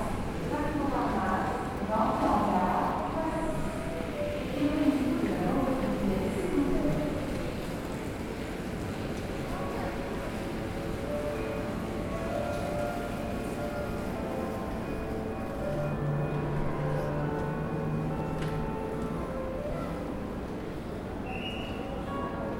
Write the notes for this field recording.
Train station "Toulouse Matabiau".